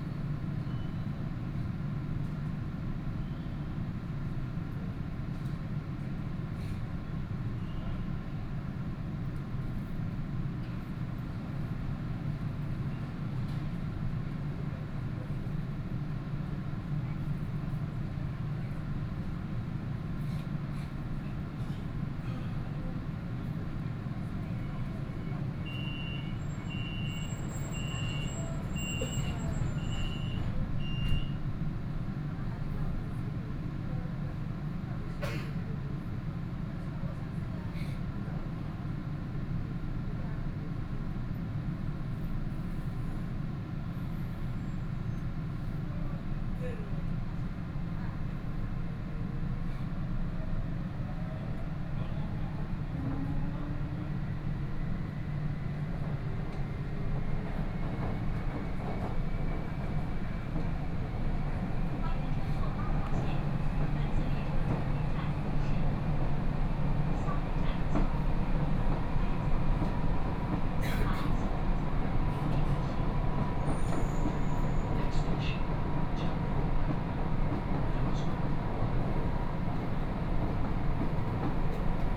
from Middle Yanji Road Station to Anshan Xincun Station, Binaural recording, Zoom H6+ Soundman OKM II